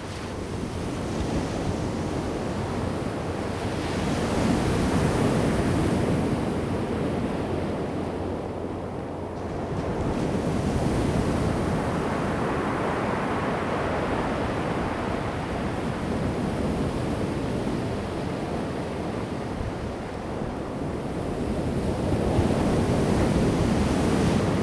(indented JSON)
{
  "title": "金崙海灘, Taitung County, Taiwan - At the beach",
  "date": "2018-03-15 17:12:00",
  "description": "At the beach, Sound of the waves\nZoom H2n MS+XY",
  "latitude": "22.53",
  "longitude": "120.97",
  "timezone": "Asia/Taipei"
}